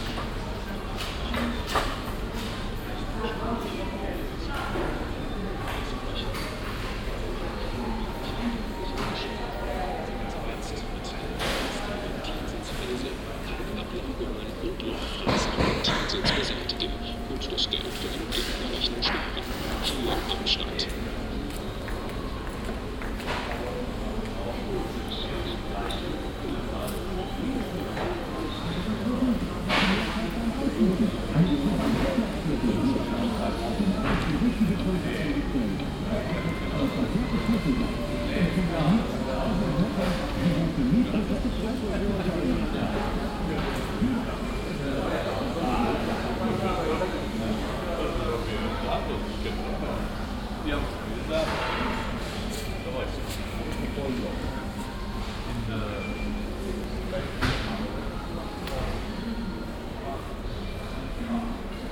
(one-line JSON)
{"title": "cologne, poll, rolshover str., baumarkt", "date": "2008-09-13 14:38:00", "description": "mittags im baumarkt, das kassenpiepsen, werbebotschaften mittels kleinstmonitoren, hintergrundsmusiken, fachtalk und farbanrührung mit spezial maschine\nsoundmap nrw - social ambiences - sound in public spaces - in & outdoor nearfield recordings", "latitude": "50.92", "longitude": "7.00", "altitude": "53", "timezone": "Europe/Berlin"}